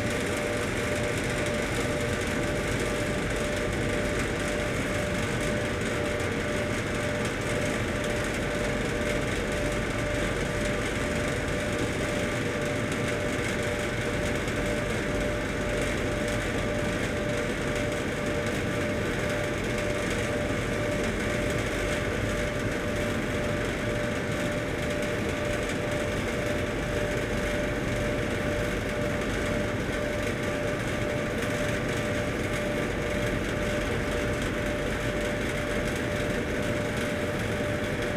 Gyé-sur-Seine, France - Vineyard
If you think vineyard is pastoral, it's only on the photos. This is the real soundscape of this kind of landscape : enormous air-conditioning systems and big noise everywhere. Here, it's a walk near a shed.
1 August, ~11:00